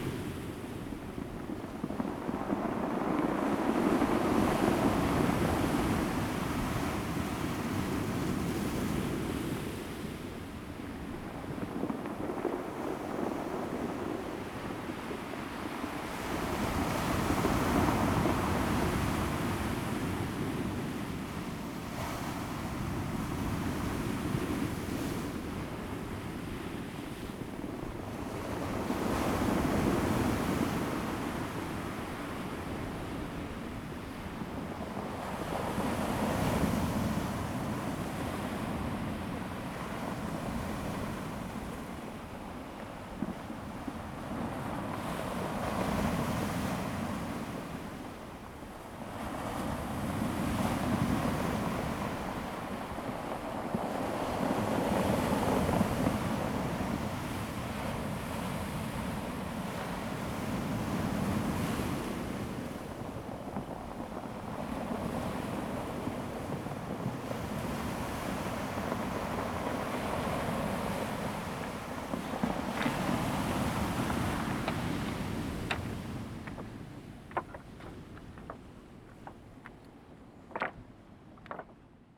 南田村, Daren Township - Sound of the waves
Sound of the waves, In the circular stone shore, The weather is very hot
Zoom H2n MS +XY
Taitung County, Taiwan, 5 September 2014, 15:30